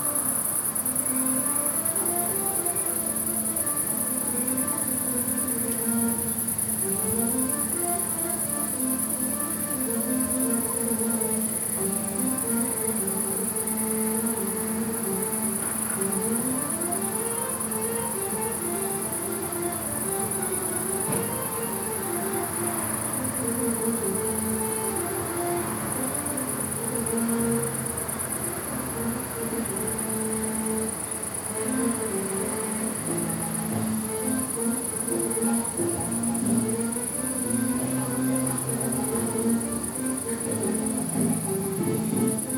SBG, Cami de Rocanegre - Casal Cultural, exterior
Dentro del Casal se celebra esta noche un espectáculo que también llega a escucharse afuera, mezclándose con el ambiente nocturno del campo y el tráfico distante en la carretera.